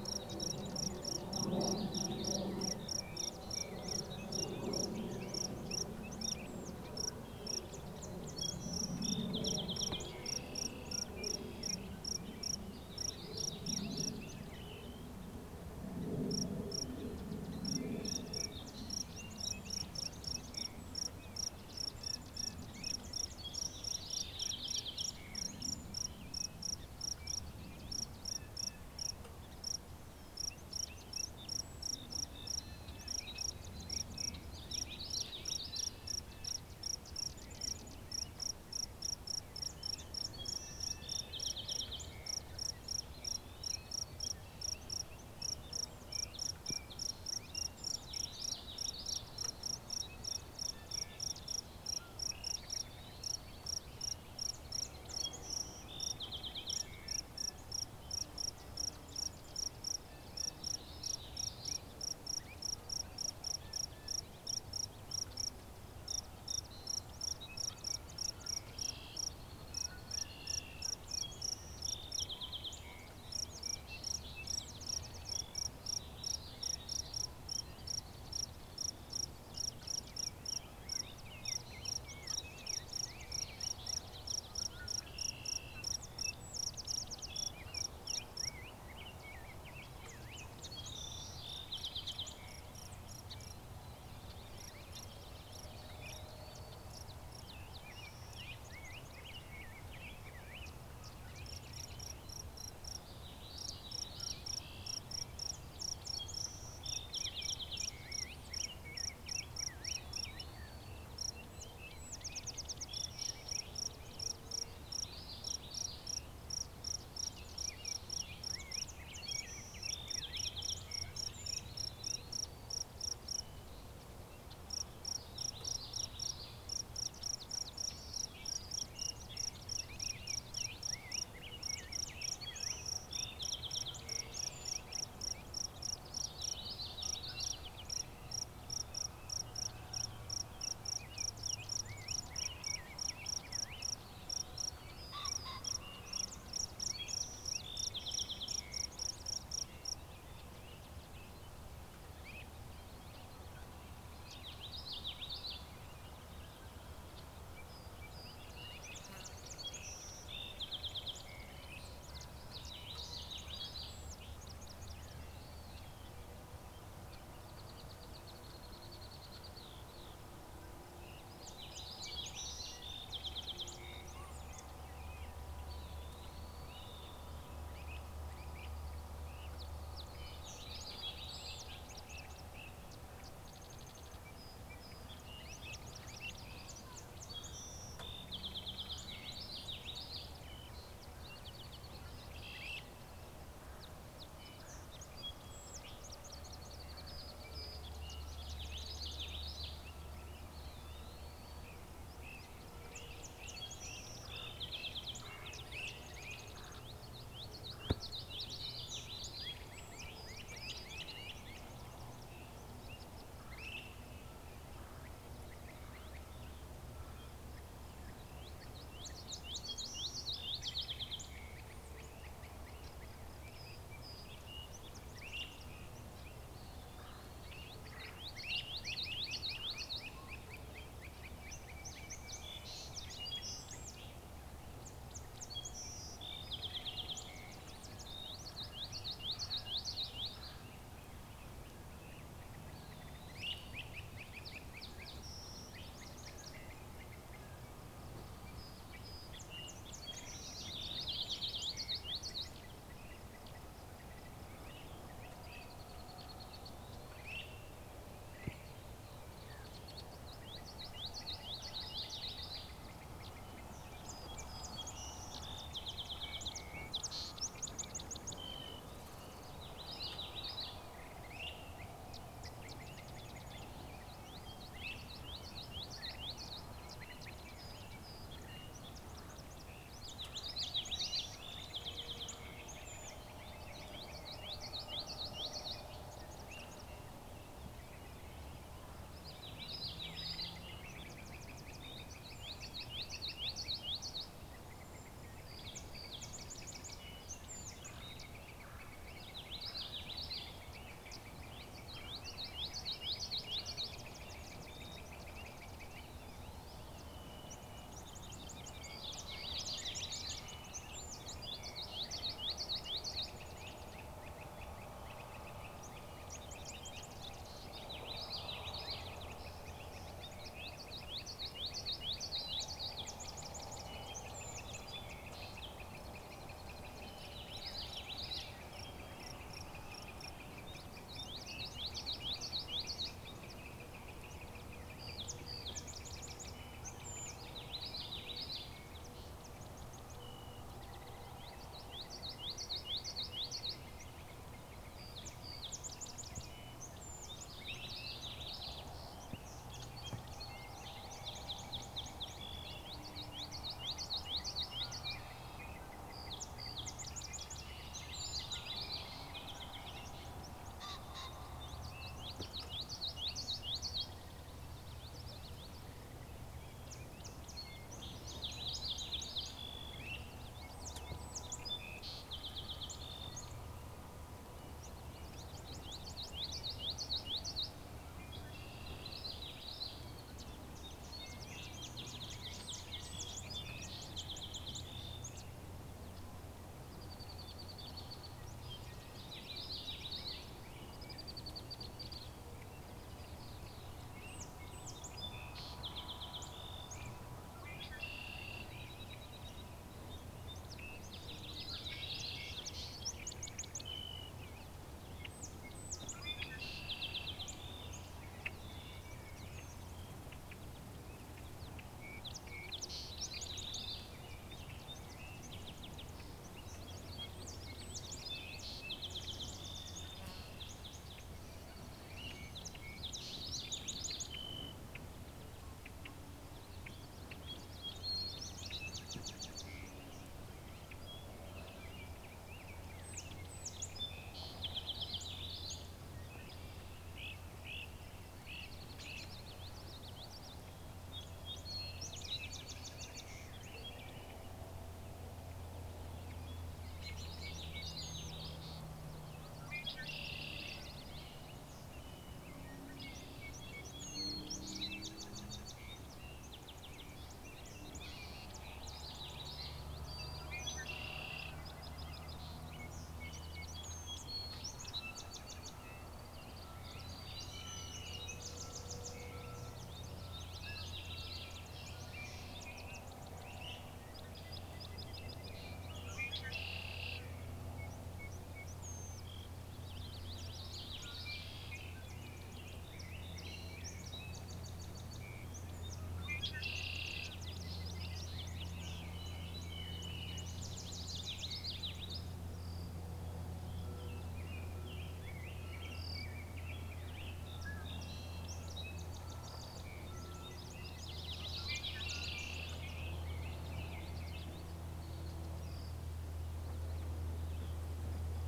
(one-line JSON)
{"date": "2022-06-07 16:15:00", "description": "Sounds of the Hardwood Creek Wildlife Management Area on an overcast summer day", "latitude": "45.23", "longitude": "-92.94", "altitude": "286", "timezone": "America/Chicago"}